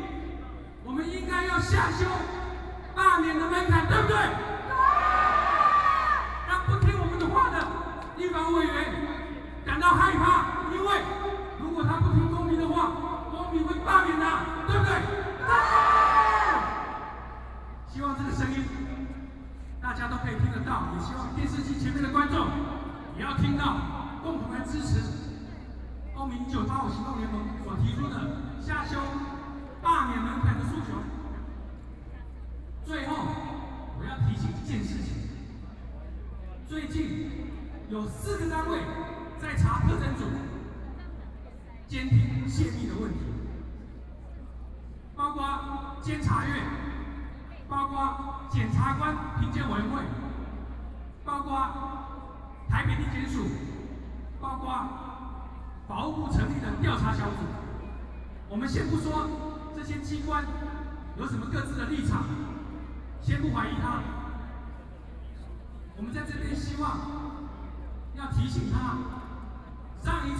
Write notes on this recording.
Shouting slogans, Binaural recordings, Sony PCM D50 + Soundman OKM II